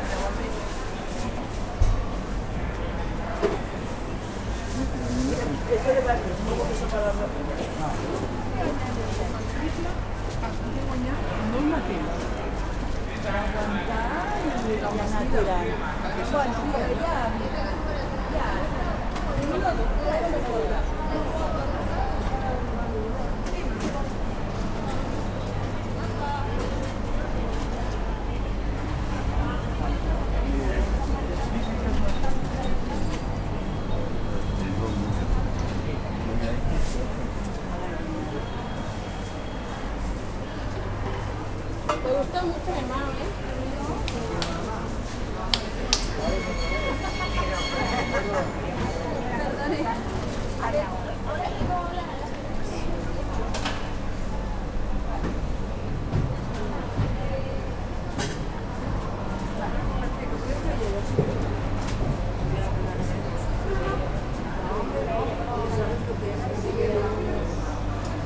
Mercat de Galvany
Sound enviroment of a food market